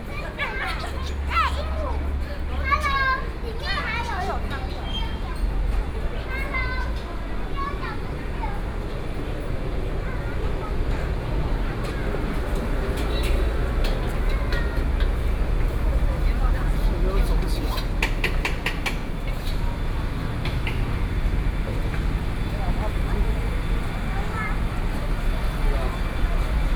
Taipei City, Taiwan - soundwalk
Traffic Sound, Noon break a lot of people walking in the road ready meal, Walking in the streets, Various shops sound, Construction noise
2 May